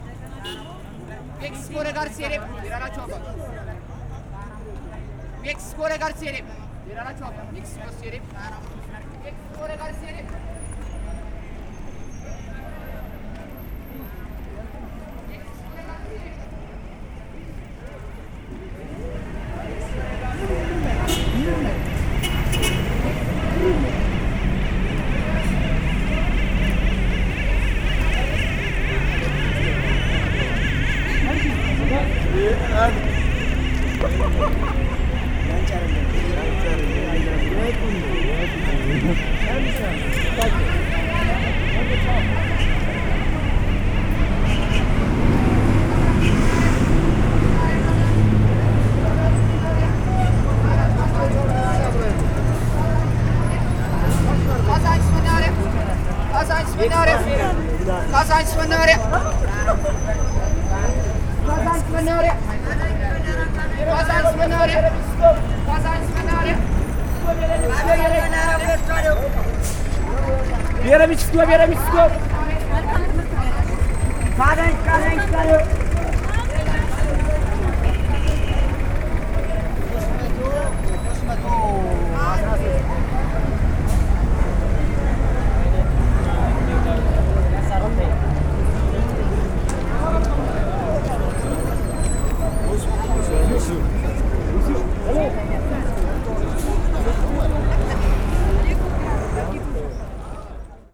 Kebena, Addis Ababa, Éthiopie - sedistkilo's weyelas
weyela is how's called the bus crier. he announces the stops and destination of the blue and white mini vans.
sedistkilo = the 6th kilometer from piazza.
Addis Ababa, Ethiopia, 28 October 2011, 19:41